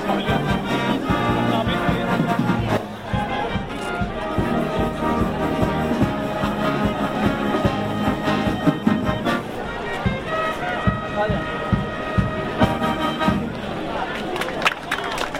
Maceira, Leiria, Portugal. (A.Mainenti)20.06.2009. Square: street orchestra on the stage and peaple
Portela do Vale da Grunha, Portugal